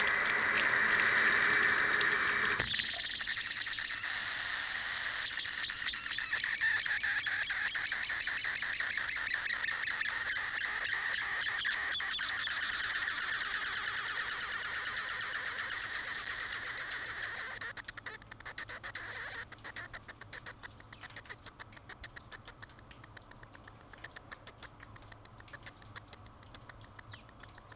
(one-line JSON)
{"title": "Кемер/Анталия, Турция - Foot shower", "date": "2021-07-13 06:25:00", "description": "Unusual sounds of foot shower", "latitude": "36.67", "longitude": "30.57", "altitude": "4", "timezone": "Europe/Istanbul"}